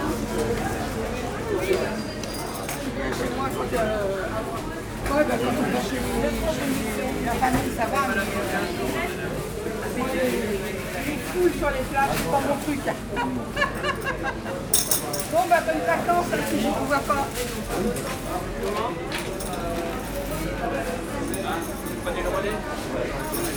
Maintenon, France - Market day
The market day in the small city of Maintenon. There's not a big activity, but it stays user-friendly.